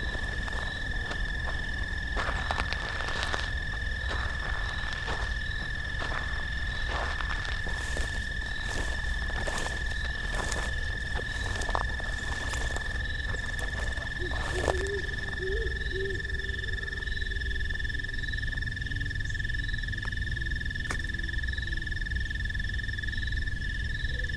Commercial Township, NJ, USA - night field crossing ll
great horned owls and screech owls are featured calling as I circle my tracks in a field, pre-dawn.